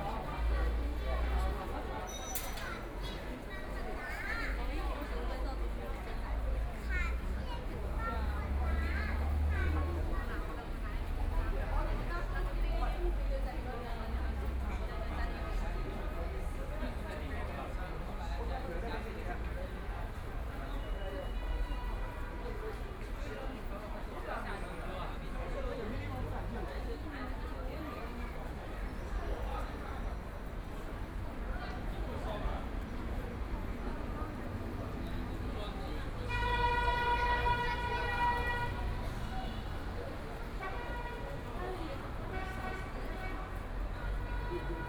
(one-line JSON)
{"title": "Yishan Road Station, Xuhui District - walking in the station", "date": "2013-11-23 15:10:00", "description": "walking in the station, Binaural recording, Zoom H6+ Soundman OKM II", "latitude": "31.19", "longitude": "121.42", "altitude": "9", "timezone": "Asia/Shanghai"}